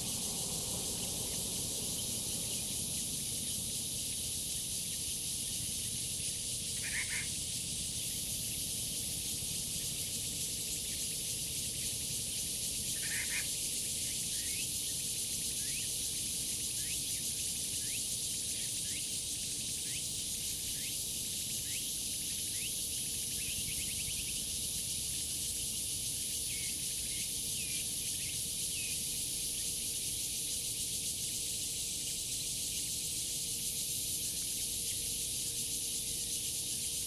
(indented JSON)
{
  "title": "545台灣南投縣埔里鎮暨南大學, NCNU library, Puli, Taiwan - Natural sounds around the NCNU library",
  "date": "2015-09-02 10:25:00",
  "description": "Cicadas sounds and bird calls at the campus of National Chi Nan University.\nDevice: Zoom H2n",
  "latitude": "23.95",
  "longitude": "120.93",
  "altitude": "585",
  "timezone": "Asia/Taipei"
}